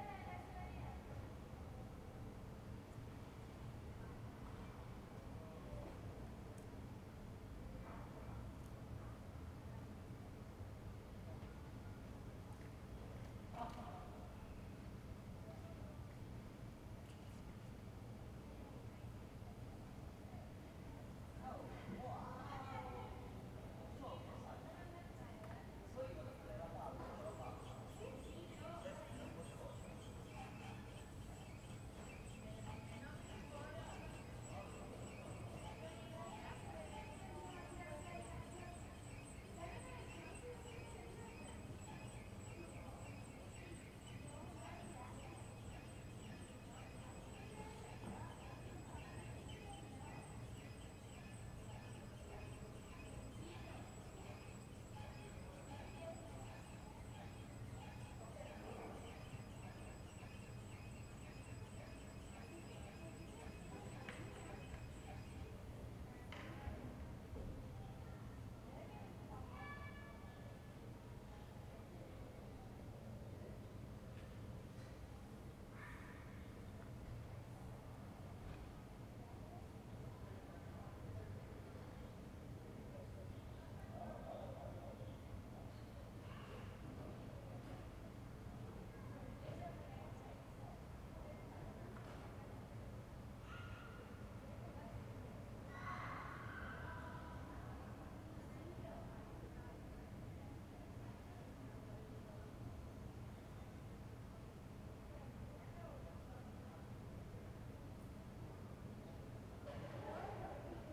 "Sunday afternoon with banjo, lol, bird and dog in the time of COVID19" Soundscape
Chapter LXIX of Ascolto il tuo cuore, città. I listen to your heart, city
Sunday May 17th, 2020. Fixed position on an internal terrace at San Salvario district Turin, sixty-eight days after (but day fourteen of phase II) emergency disposition due to the epidemic of COVID19.
Start at 3:29 p.m. end at 4:15 p.m. duration of recording 45’47”